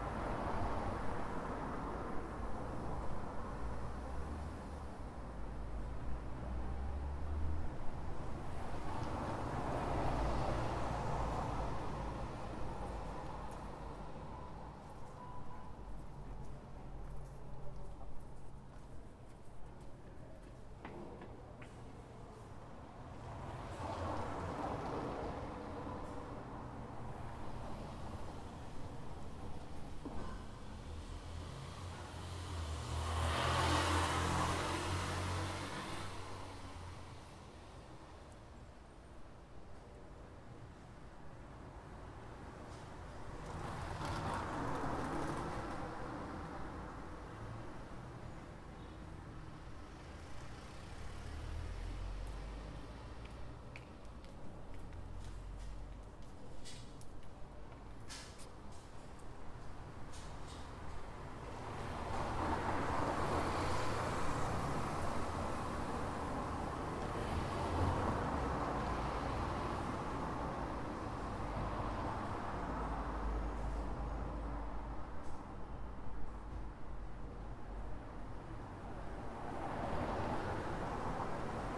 Av. Wallace Simonsen - Nova Petrópolis, São Bernardo do Campo - SP, 09771-210, Brasil - Av. Wallace Simonsen, 435 - English School
This was recorded in front of a small building which exist a restaurant at the first floor and an English school at the second one. It was recorded by a Tascam DR-05 placed on the floor of a busy avenue.